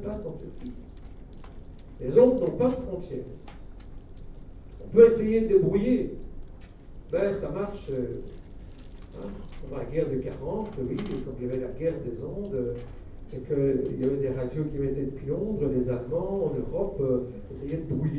Centre, Ottignies-Louvain-la-Neuve, Belgique - A course of medias
In the very big Jacques Moelaert auditoire, a course about medias.